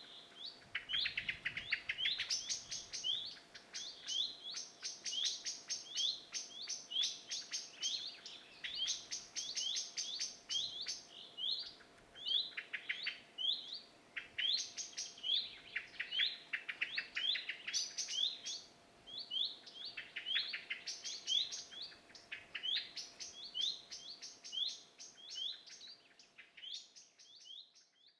Horní Orlice, Červená Voda, Česká republika - ptáčkové večer